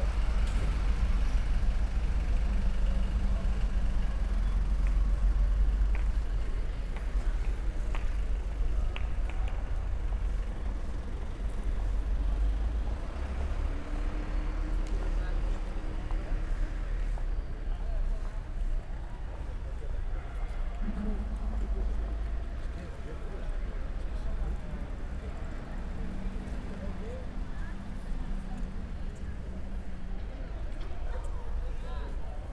Ramblas, Barcelona, Spain - walk the sreets
walking the streets of barcelona, near Raval. recorder: Zoom H4n, church audio binaurals mics (omni capsules), attached in each side of a pair of headphones.